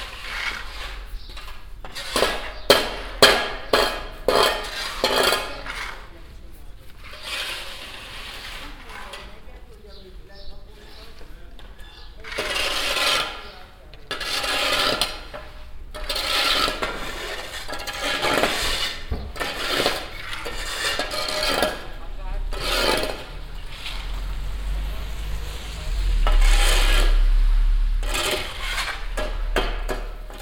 budapest, tüzolto utca, cleaning the street from snow and ice
in the morning - a group of man cleaning the street from snow and ice
international city scapes and social ambiences